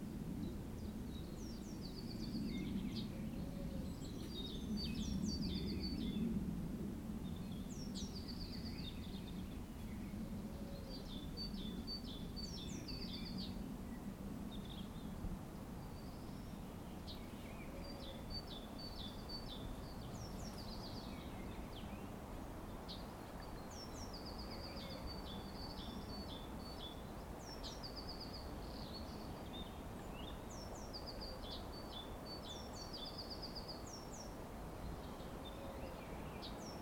Forest birds singing, trees rustling, then a plane humming above ruins it all.
Recorded with Zoom H2n, 2CH, deadcat, handheld.